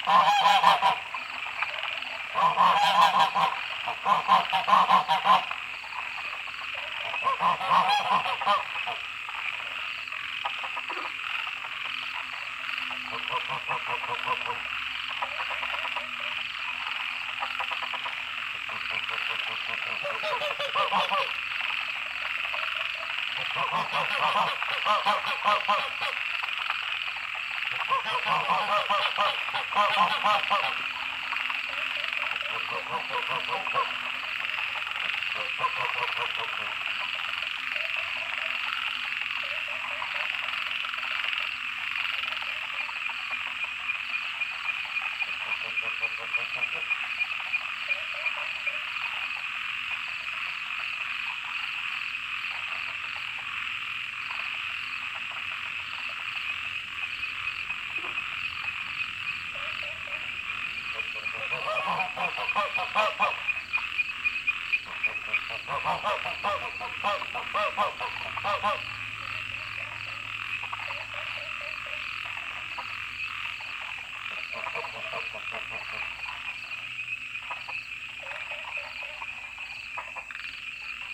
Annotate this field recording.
Frogs chirping, Goose calls, Dogs barking, Zoom H2n MS+XY